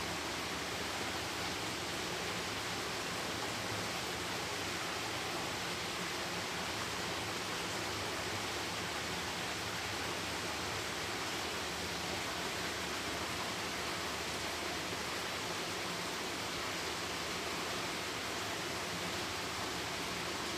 sound of one from many tubes to which is creek converted on its way down